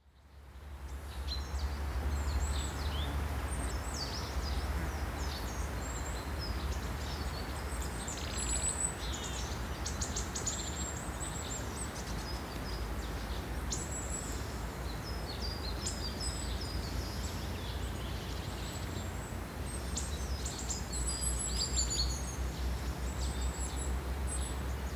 Recordist: Tamar Elene Tsertsvadze
Description: Inside Nida's forest. Birds, insects and wind passing the trees. Recorded with ZOOM H2N Handy Recorder.
Lithuania, August 2016